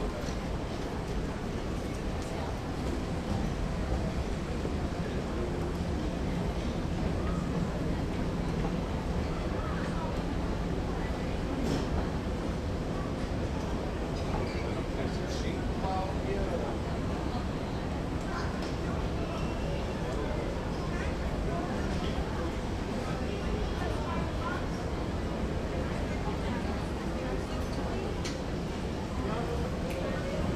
berlin, alexanderplatz: kaufhaus - the city, the country & me: department store
escalator soundwalk
the city, the country & me: may 15, 2010
Berlin, Germany, 15 May 2010, ~15:00